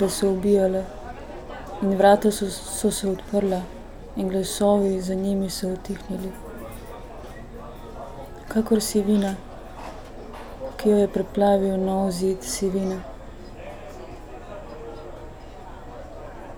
window, Novigrad, Croatia - Ko so se razpostavili ... skovirji večera
reading fragment of poem Lupine, Dane Zajc
12 July 2014